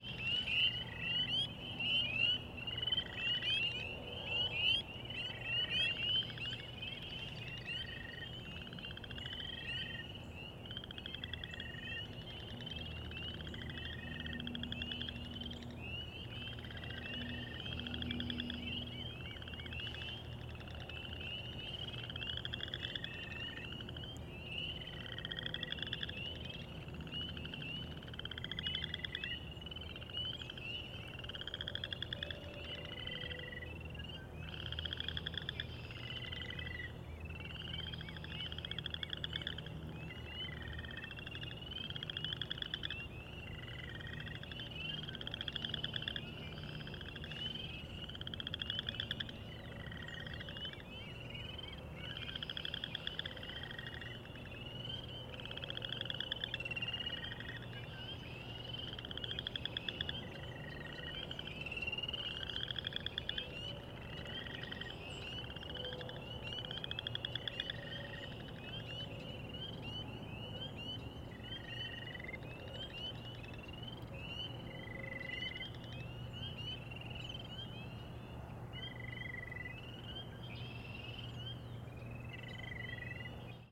Greentree Park, Kirkwood, Missouri, USA - Greentree Park
Spring ambient dusk recording in this wetland park under the powerlines.
Missouri, United States, 16 March 2021